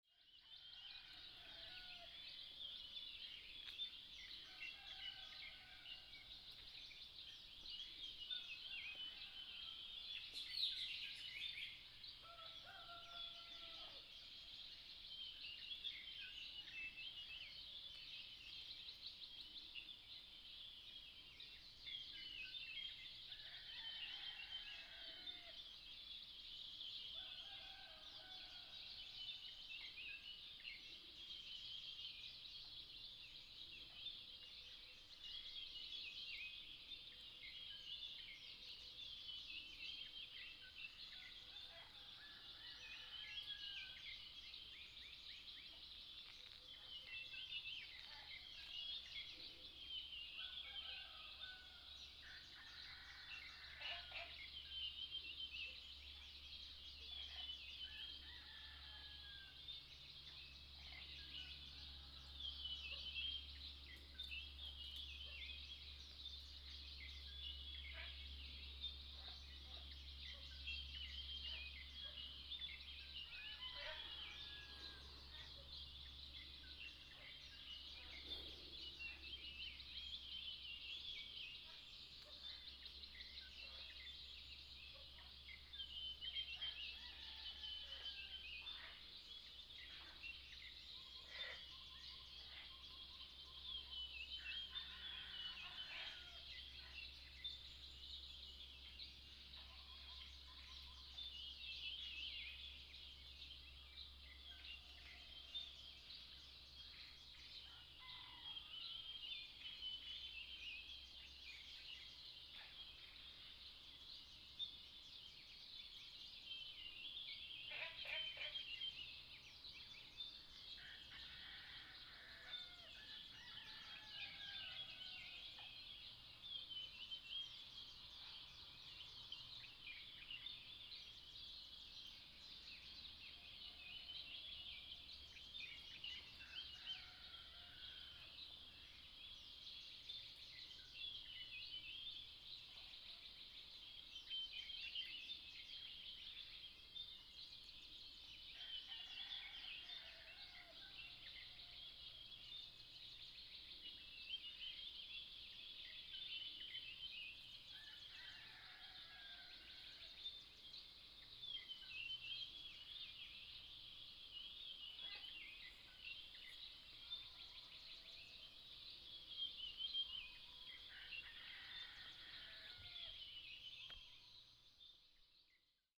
綠屋民宿, Nantou County - Early morning
Crowing sounds, Bird calls, at the Hostel